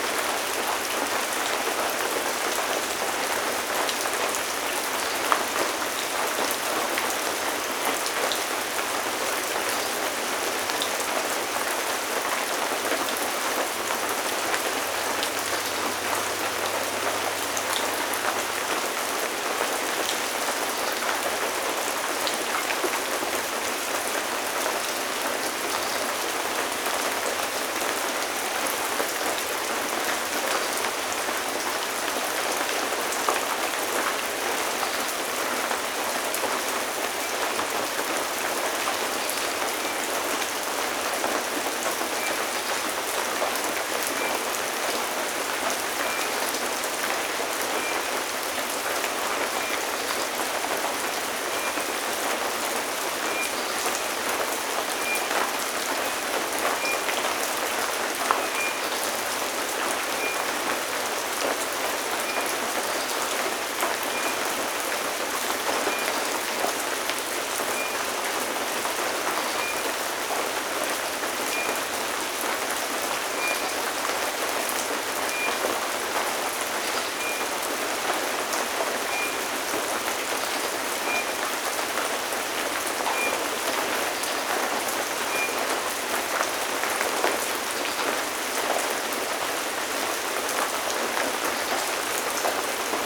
Rain during the night in the Brazilian forest, in the small village of Serrinha do Alambari in the state of Rio de Janeiro. Recorded from the balcony of the house, during the night (around midnight for this part of the recording)
GPS: -22.392420 -44.560264
Sound Ref: BR-210831-02
Recorded during the night on 31st of August 2021